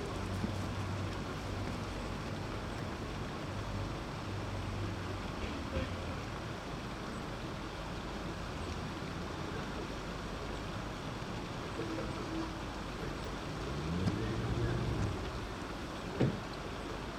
London Borough of Tower Hamlets, UK - behind iron works walk towards canel by Olympic site
London, Greater London, UK, March 2012